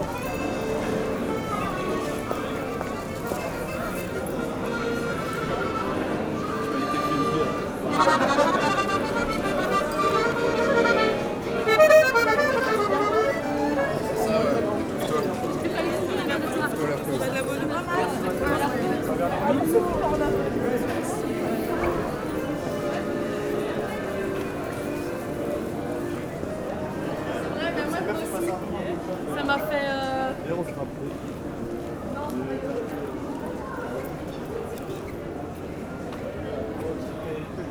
Centre, Ottignies-Louvain-la-Neuve, Belgique - City ambience
Crossing the city between the two main squares.